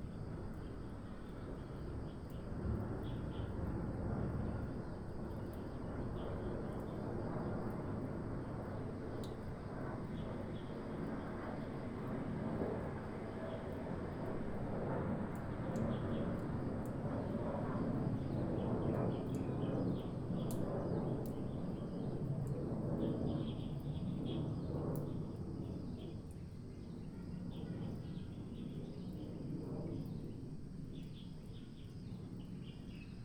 in the morning, The sound of the aircraft, Bird cry